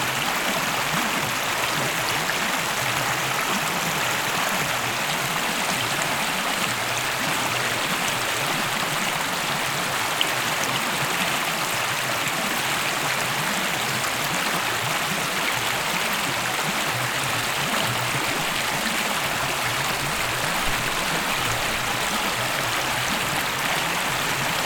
Garrison, NY, USA - Indian Brook
Sounds of water running.